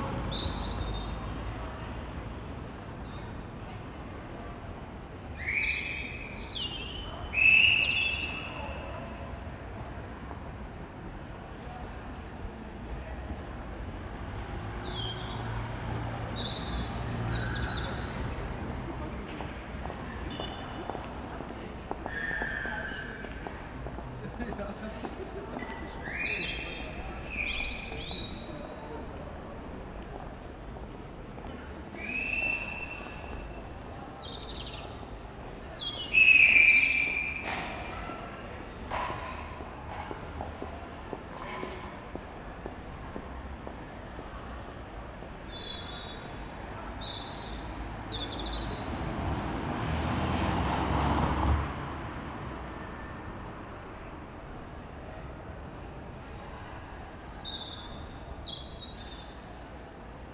Brüsseler Platz, Köln - solitude bird and weekend people
Köln, Brüsseler Platz, solitude bird singing in a tree at night, noisy weekend people. it's relatively warm, many people are on the streets on this friday night.
(tech note: sony pcm d50, audio technica AT8022)